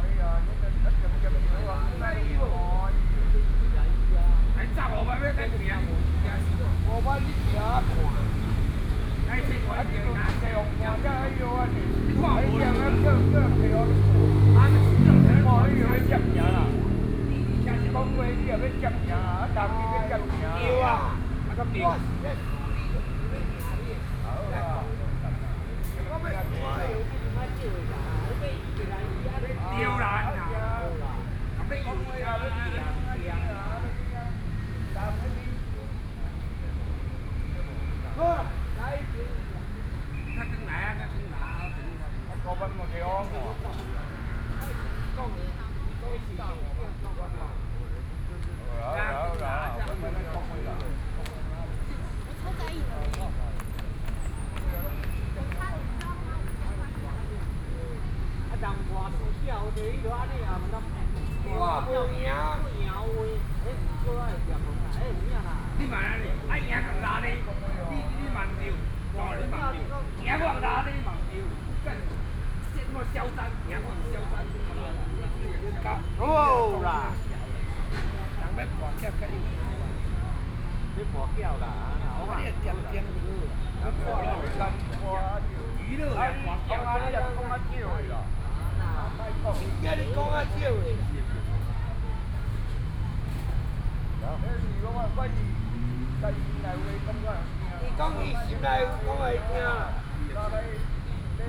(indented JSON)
{
  "title": "Wenhua Park, Beitou, Taipei City - Drunkard",
  "date": "2013-09-16 18:52:00",
  "description": "A group of alcoholics is a dispute quarrel, Traffic Noise, Zoom H4n+ Soundman OKM II",
  "latitude": "25.14",
  "longitude": "121.50",
  "altitude": "19",
  "timezone": "Asia/Taipei"
}